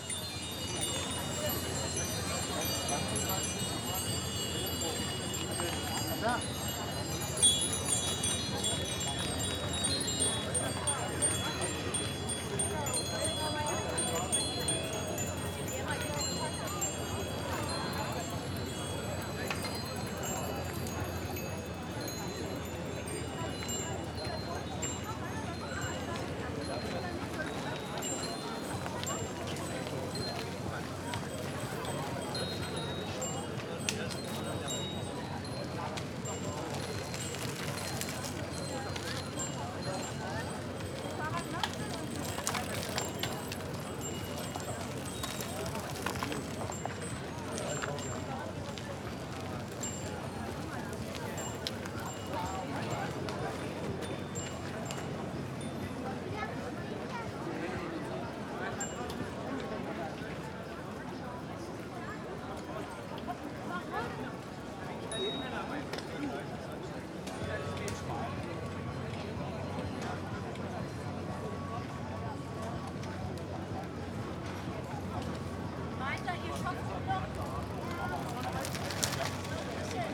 Nikolassee, Berlin - cyclist chatedral
a gathering of cyclist. the street is taken by a huge cyclist crowd, chatting, singing, playing music and radios. the tour is about to start any minute. cyclists react with ringing their bells.
16 June 2014, 12:43